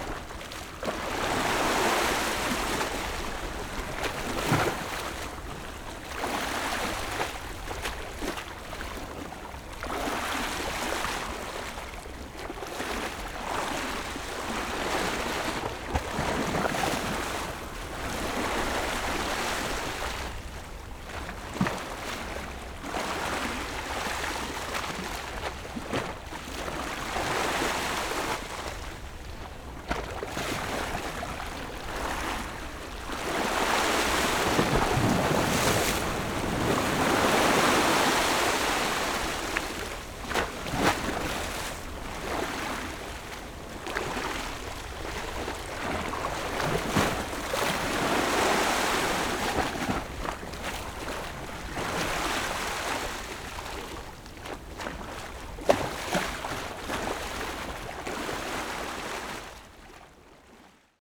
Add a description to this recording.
Sound of the waves, Small port, Pat tide dock, Zoom H6 +Rode NT4